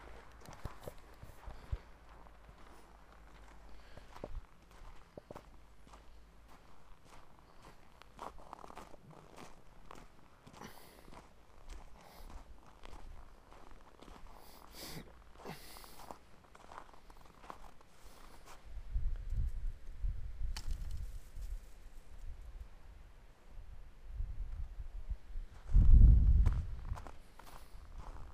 Świeradów-Zdrój, Polen - Hotel courtyard
Poland, 20 January